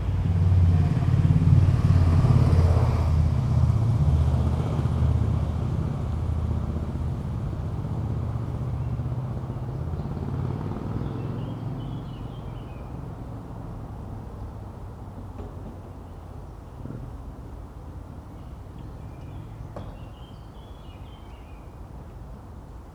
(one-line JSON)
{"title": "Grunewald, Berlin, Germany - Grunewald Cemetery - entrance bridge and squeaky gate", "date": "2014-06-15 14:00:00", "description": "Sunday, fine weather. The cemetery is surrounded on by rail tracks. The entrance bridge crosses one that no train has used for sometime. Strangely a working red signal still shines.", "latitude": "52.50", "longitude": "13.29", "altitude": "45", "timezone": "Europe/Berlin"}